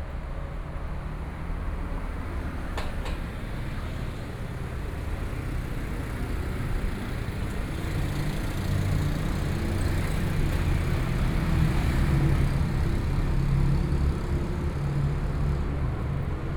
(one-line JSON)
{"title": "Jianzhong St., Miaoli City - the underpass", "date": "2013-10-08 09:37:00", "description": "Walking through the underpass, Traffic Noise, Zoom H4n+ Soundman OKM II", "latitude": "24.57", "longitude": "120.82", "altitude": "45", "timezone": "Asia/Taipei"}